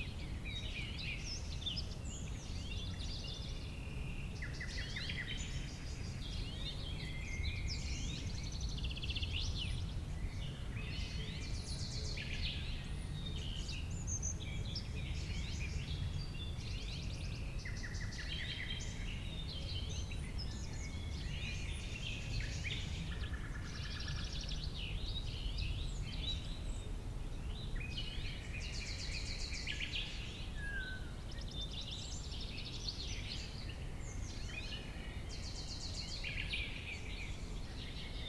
Valonsadero, Soria, Spain - Paisagem sonora do Parque Natural de Valonsadero - A Soundscape of Valonsadero Natural Park
Paisagem sonora do Parque Natural de Valonsadero em Soria, Espanha. Mapa Sonoro do Rio Douro. Soundscape of Valonsadero Natural Park in Soria, Spain. Douro river Sound Map.